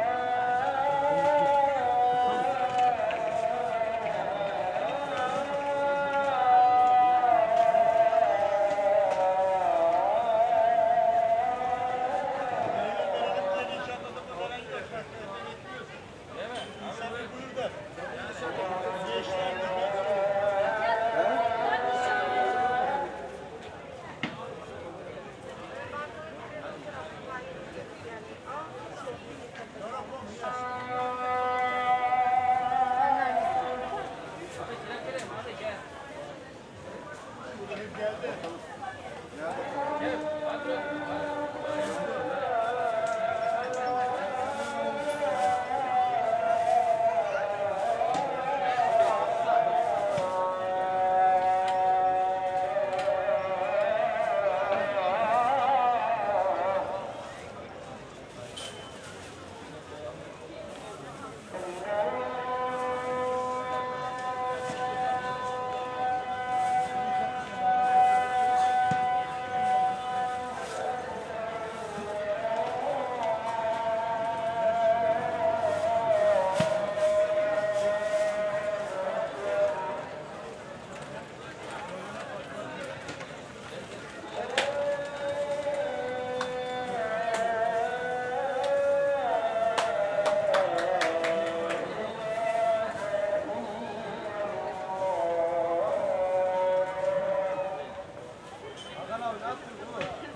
Dalyan Belediyesi, Dalyan/Ortaca/Muğla Province, Turkey - Call to Prayer heard from the market
Call to Prayer heard from the market.
(Recorded w/ AT BP4025 on SD 633)